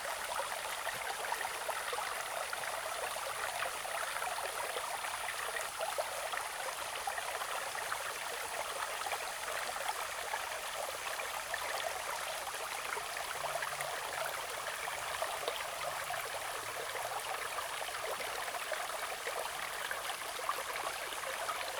種瓜坑溪, 埔里鎮成功里, Taiwan - sound of the stream
The sound of the stream
Zoom H2n MS+XY +Spatial audio
Puli Township, Nantou County, Taiwan, 27 July 2016